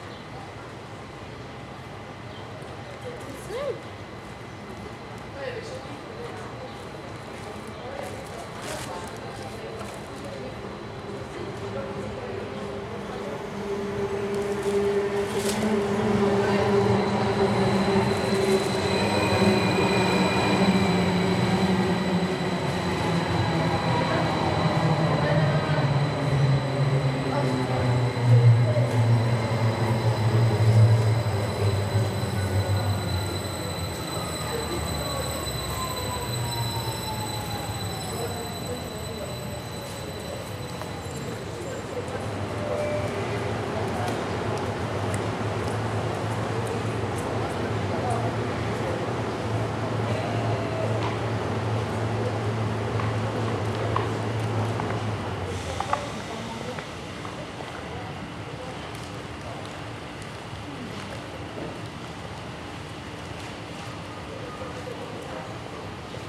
Gare Cornavin, Quai, Place de Cornavin, Genève, Suisse - Cornavin Station
Quai 1 de la Gare Cornavin. Période de semi confnement Covid19. On entend les voyageurs, l’escalateur, des femmes qui discutent en mangeant un sandwich, les annonces de la gare, un train qui entre en gare voie 1.
Platform 1 of the Cornavin train station. Covid19 semi-confinement period. We can hear travelers, the escalator, women chatting while eating a sandwich, announcements from the station, a train entering station track 1.
Rec Zoom H2n M+S - proceed.
Schweiz/Suisse/Svizzera/Svizra